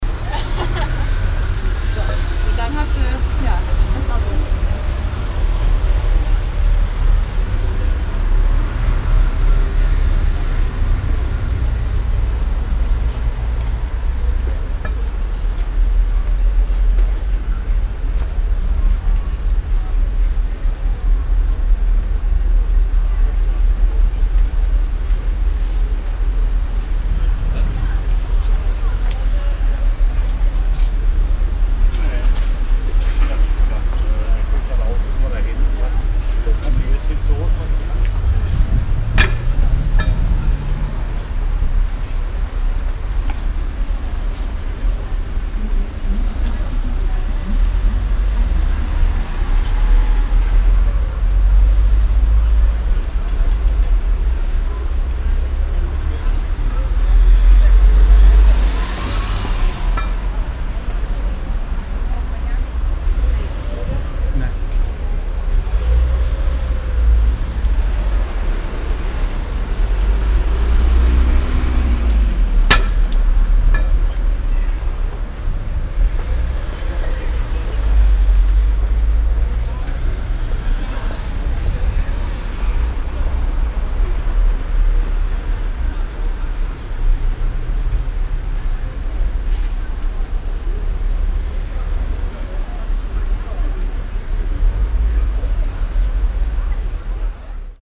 lockerer kanaldeckel auf dem fussgänger trottoir, verkehrslärm, gesprächfetzen und schritte, nachmittags mit böigen winden
soundmap nrw: social ambiences/ listen to the people - in & outdoor nearfield recordings